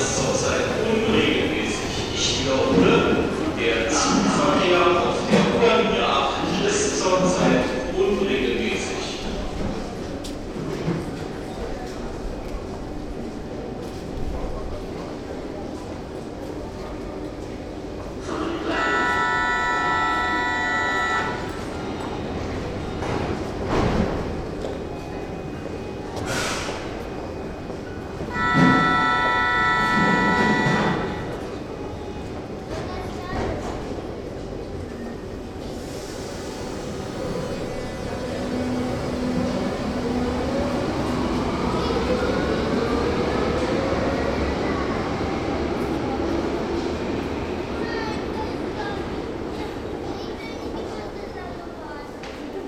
berlin: u-bahnhof schönleinstraße - the city, the country & me: sweeper, train pulling into subway station, announcement
7 April 2009